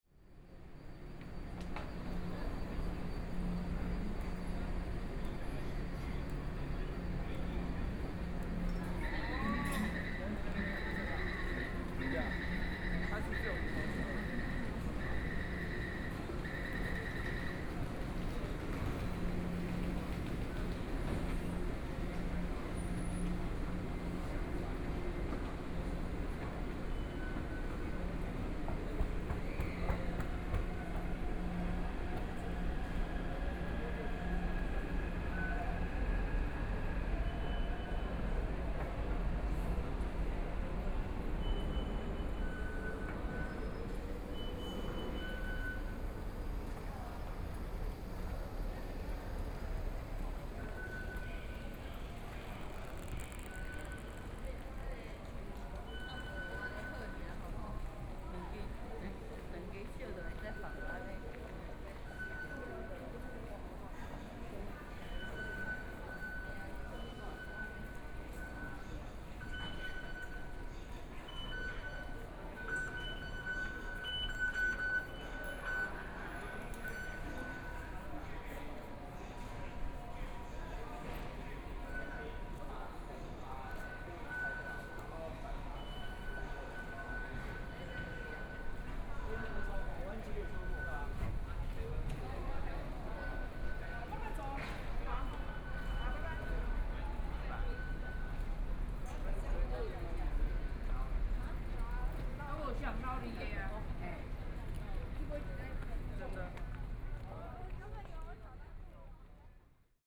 walking in the Station, Binaural recordings, Zoom H4n + Soundman OKM II
Zhongshan District, Taipei City, Taiwan, 6 February